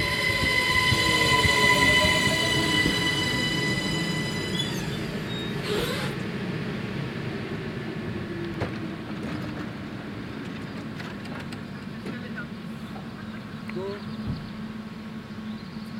Gare de Poix-Saint-Hubert, Saint-Hubert, Belgique - Station ambience
Railway crossing bell, train coming, a few birds.
Tech Note : SP-TFB-2 binaural microphones → Olympus LS5, listen with headphones.